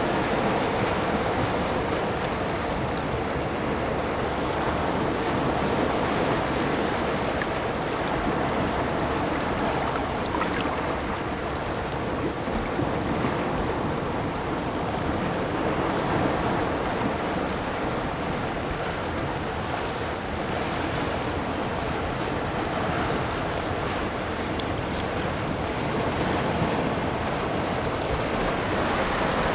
白沙灣, Shimen Dist., New Taipei City - the waves
Big waves, sound of the waves
Zoom H4n+Rode NT4(soundmap 20120625-45 )